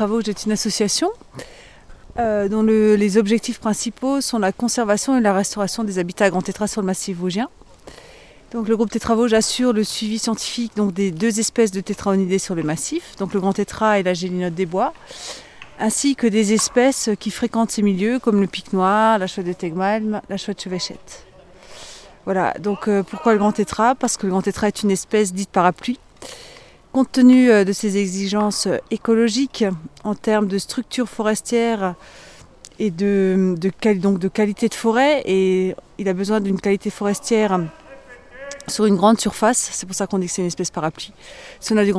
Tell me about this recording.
Mme Françoise PREISS, chargée de missions scientifiques du Groupe Tétras Vosges. Le GTV assure le suivi scientifique des populations de tétraonidés et de leurs habitats sur l'ensemble du massif vosgien ( 7 départements et 3 régions). Une centaine de membres bénévoles participent chaque année au suivi. Le suivi des populations requiert un bon sens du terrain et une motivation qui soient à même de garantir l'éthique du travail accompli. Pour pouvoir être validés et exploités les résultats doivent être formalisés et des fiches techniques correspondantes ont été mises au point par la commission technique du GTV. Le massif vosgien est découpé en 10 secteurs pour lesquels un coordinateur local est responsable du bon fonctionnement du dispositif.